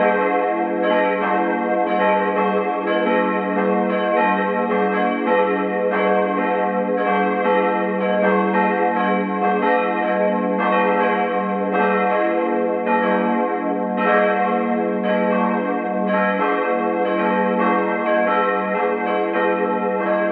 {
  "title": "Hugenottenstraße, Hameln, Germany CHURCH BELLS - CHURCH BELLS (Evangelisch-reformierte Kirche Hameln-Bad Pyrmont)",
  "date": "2017-06-19 11:13:00",
  "description": "Sound Recordings of Church Bells from Evangelical Reformed Church in Hameln.",
  "latitude": "52.10",
  "longitude": "9.36",
  "altitude": "70",
  "timezone": "Europe/Berlin"
}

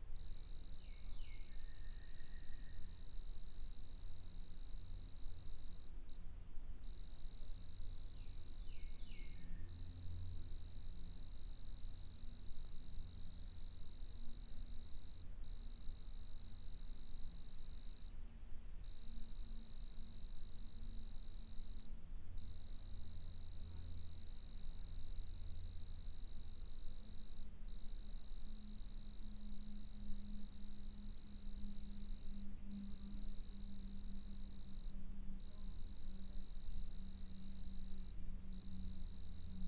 {
  "date": "2022-04-14 15:45:00",
  "description": "The sounds of the Holla Bend National Wildlife Refuge\nRecorded with a Zoom H5",
  "latitude": "35.14",
  "longitude": "-93.08",
  "altitude": "104",
  "timezone": "America/Chicago"
}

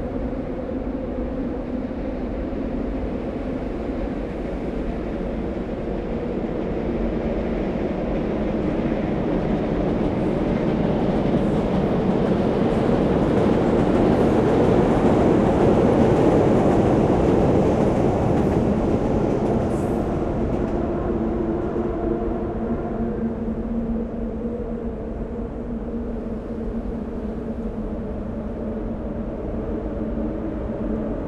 {
  "title": "Lisbon, Ponte 25 de Abril - river Tejo waves, soundscape under bridge",
  "date": "2017-10-26 17:45:00",
  "description": "place revisited on a warm October afternoon (Sony PCM D50, DPA4060)",
  "latitude": "38.70",
  "longitude": "-9.18",
  "timezone": "Europe/Lisbon"
}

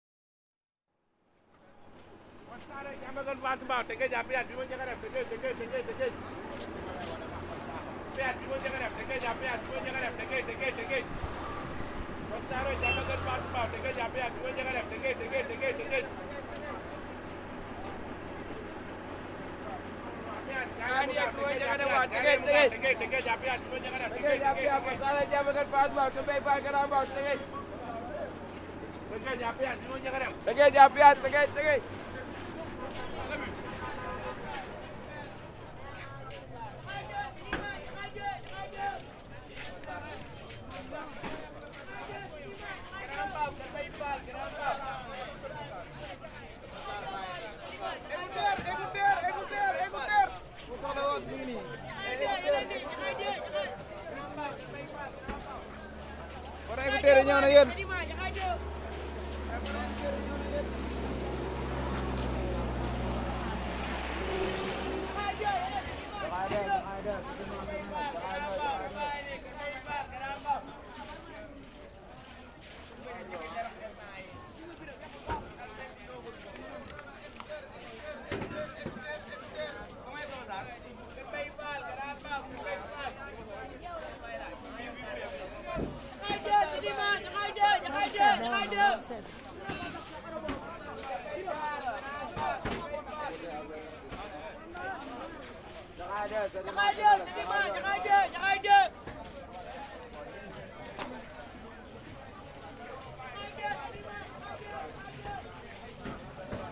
{
  "title": "Gare Routiere Petersen, Dakar, Sénégal - PETERSEN",
  "date": "2021-06-14 08:29:00",
  "description": "The Pétersen bus station receives more than 50,000 people every day. Located in the heart of the city of Dakar, its main function is to ensure the mobility of people between downtown Dakar and its suburbs. The \"Ndiaga Ndiaye\": cars dating back several years are the first choice of the people who live in the suburbs . And to quickly fill your bus, you have to strain your vocal cords by shouting ... often too loud!",
  "latitude": "14.67",
  "longitude": "-17.44",
  "altitude": "12",
  "timezone": "Africa/Dakar"
}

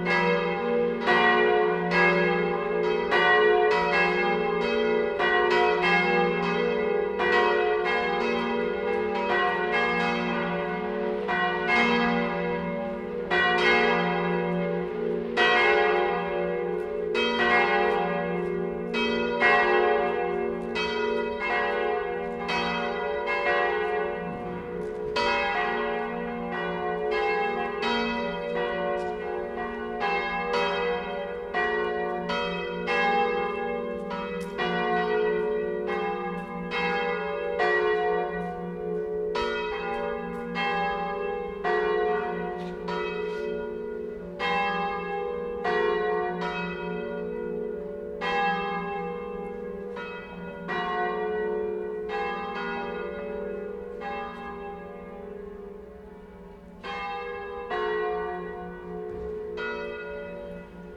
{
  "title": "Vingst, Köln, Deutschland - Glocken von Sankt Theodor / Bells of St. Theodor",
  "date": "2014-12-31 17:55:00",
  "description": "Das Ende des Läutens der Glocken von Sankt Theodor vor dem Silvestergottesdienst.\nThe end of the ringing of the bells of St. Theodor before New Year's Eve service.",
  "latitude": "50.93",
  "longitude": "7.02",
  "altitude": "51",
  "timezone": "Europe/Berlin"
}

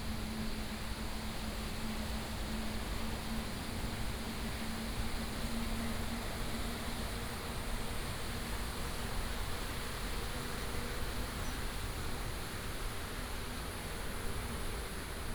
{
  "title": "桃米溪, 埔里鎮桃米里, Taiwan - Walking along beside the stream",
  "date": "2015-09-03 07:37:00",
  "description": "Walking along beside the stream, Traffic Sound",
  "latitude": "23.94",
  "longitude": "120.93",
  "altitude": "473",
  "timezone": "Asia/Taipei"
}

